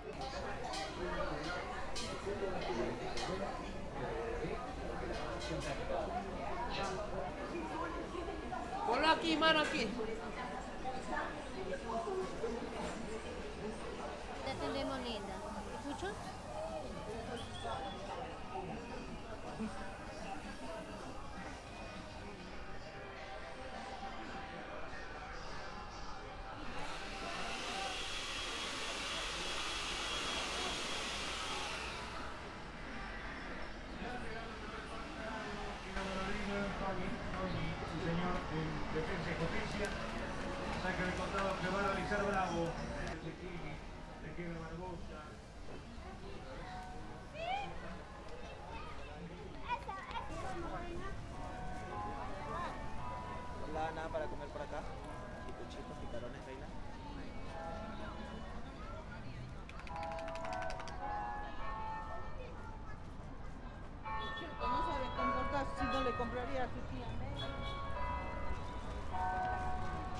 This mall is located next to the Parque de la Reserva de Lima, which has a history Reserve in honor of the reserve troops that participated during the War of the Pacific in the defense of the city of Lima. At the present time, the circuitomagico de las aguas, an icon of the city of Lima and symbol of the recovery of Lima's public spaces, operates. The integration mall also borders the national stadium of Peru, and with a church, a mall that is full of culinary mixtures for the delight of its public.